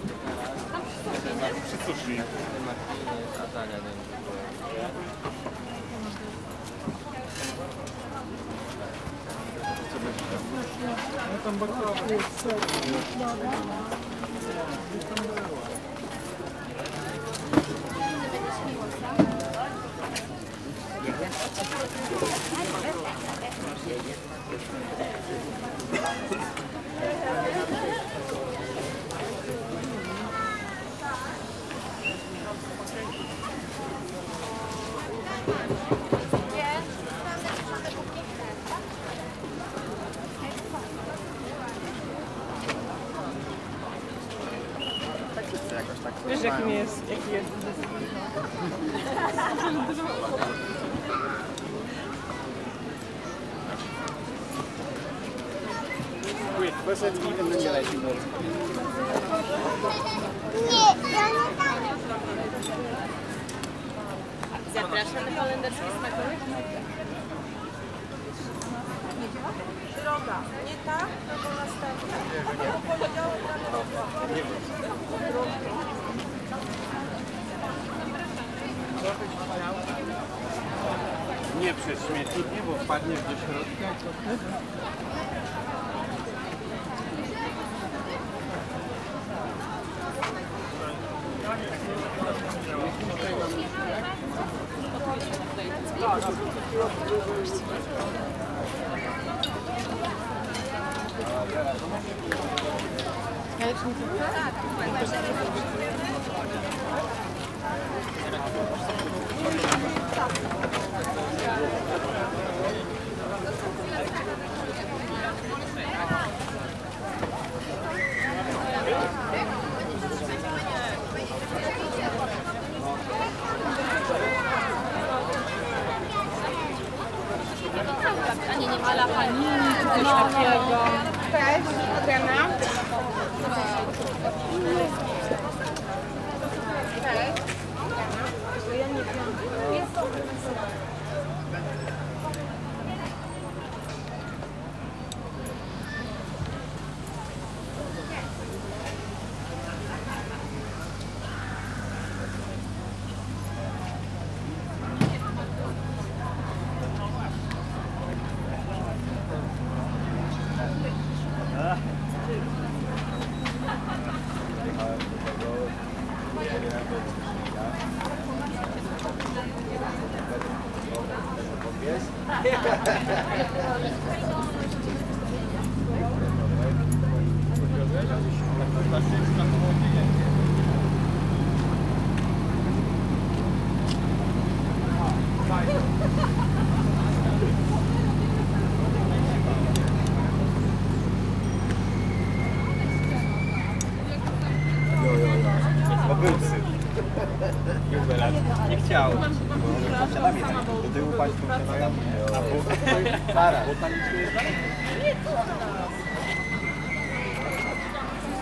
Poznan, Park Solacki - Sunday Market
a hip market on a Sunday morning organized in Sołacki Park. a very crowded and dynamic place. A few stalls with eco vegetables, various cuisine, organic foods, fruit preserves, bakers, coffee places, Chinese medicine and other quirky services. a trailer power transformer trembling with deep drone all over the place.
Poznan, Poland